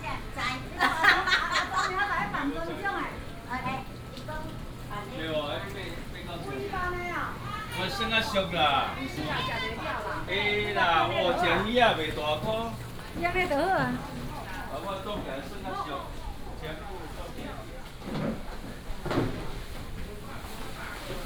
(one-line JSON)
{"title": "西屯市場, Xitun Dist., Taichung City - Walking in the market", "date": "2017-03-22 12:34:00", "description": "Walking in the market", "latitude": "24.18", "longitude": "120.64", "altitude": "99", "timezone": "Asia/Taipei"}